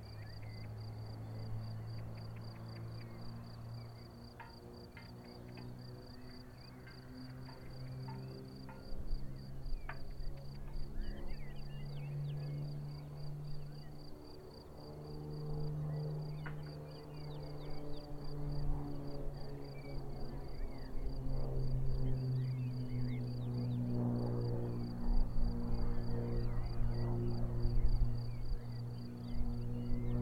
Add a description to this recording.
Herrlicher Blick in die Große Ebene; Zirpen der Grillen; Motorenlärm eines Kleinflugzeugs